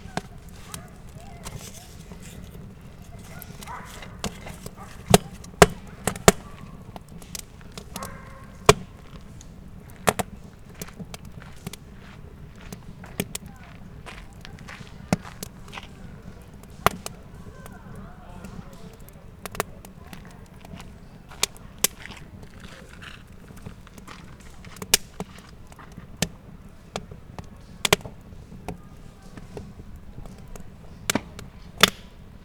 {"title": "Rolley Lake Trail, Mission, BC, Canada - Campfire at dusk", "date": "2020-10-03 18:43:00", "description": "Recorded on a Zoom H5 during an overnight camping trip.", "latitude": "49.25", "longitude": "-122.38", "altitude": "253", "timezone": "America/Vancouver"}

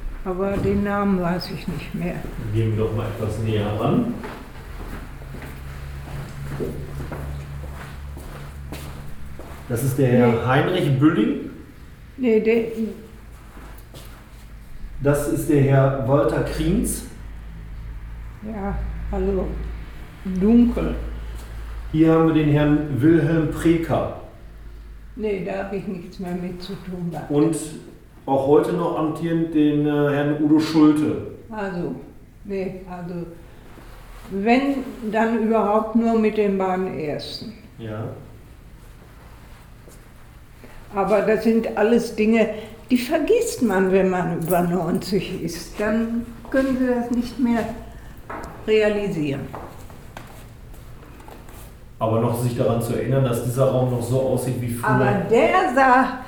{"title": "Sitzungssaal Amtshaus Pelkum, Hamm, Germany - Ilsemarie von Scheven talks local history in situ", "date": "2014-11-04 11:50:00", "description": "Finally we enter the boardroom: \"This is the only room that has remained exactly the same as before... here I feel at home!!!\" Mrs von Scheven had prepared two short texts which she reads for us in the meeting room.\nZuletzt betreten wir den Sitzungsraum: “Also dies ist der einzige Raum, der genauso geblieben ist wie früher… hier fühle ich mich zu Hause!!” Frau von Scheven hatte zwei kurze Texte vorbereitet, die sie uns im Sitzungssaal vorliest.", "latitude": "51.64", "longitude": "7.75", "altitude": "63", "timezone": "Europe/Berlin"}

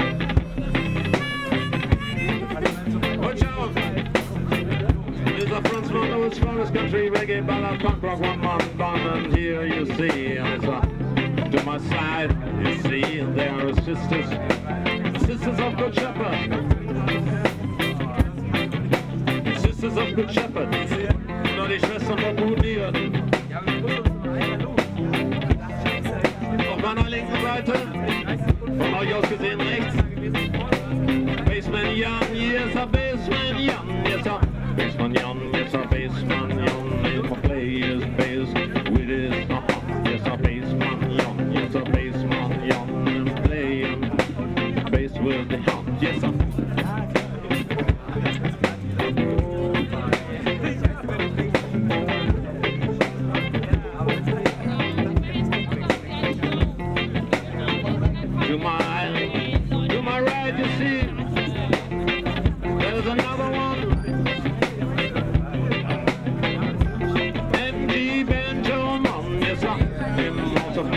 {"title": "berlin: hobrechtbrücke - the city, the country & me: country & reggae band", "date": "2012-06-21 22:09:00", "description": "country & reggae band during fête de la musique (day of music)\nthe city, the country & me: june 21, 2012", "latitude": "52.49", "longitude": "13.43", "altitude": "41", "timezone": "Europe/Berlin"}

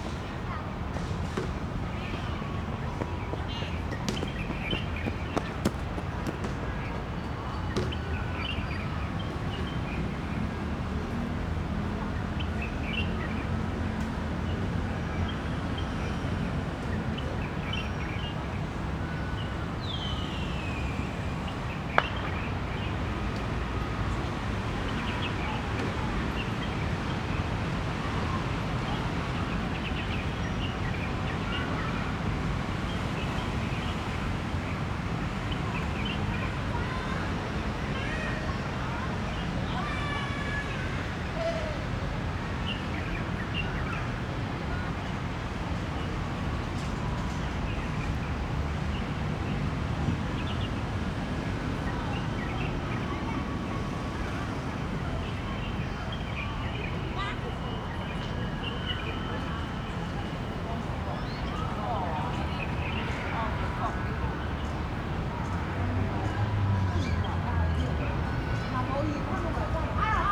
{"title": "二二八和平紀念公園, Sanchong Dist., New Taipei City - in the Park", "date": "2012-03-15 16:56:00", "description": "in the Park, Traffic Sound, birds sound\nZoom H4n +Rode NT4", "latitude": "25.07", "longitude": "121.49", "altitude": "5", "timezone": "Asia/Taipei"}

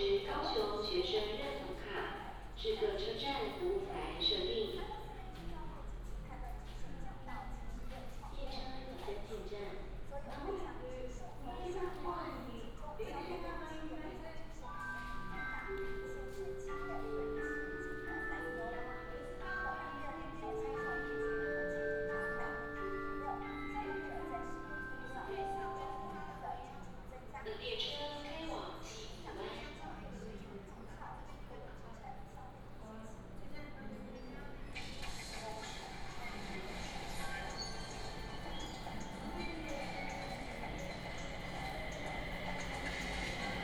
{"title": "Orange Line (KMRT), Fongshan, Kaohsiung City - Take the MRT", "date": "2018-03-30 10:05:00", "description": "Take the MRT, In-car message broadcasting", "latitude": "22.62", "longitude": "120.37", "altitude": "20", "timezone": "Asia/Taipei"}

